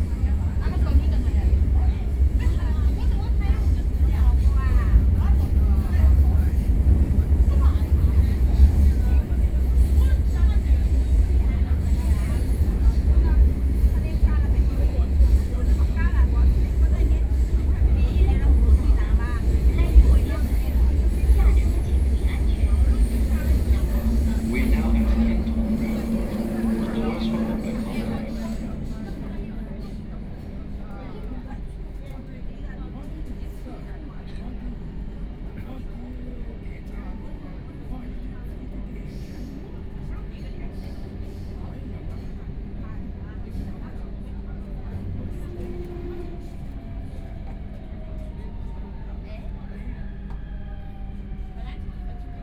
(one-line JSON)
{"title": "Zhabei District, Shanghai - Line 10 (Shanghai Metro)", "date": "2013-11-25 13:09:00", "description": "from Hailun Road station to East Nanjing Road station, Binaural recording, Zoom H6+ Soundman OKM II", "latitude": "31.25", "longitude": "121.48", "altitude": "18", "timezone": "Asia/Shanghai"}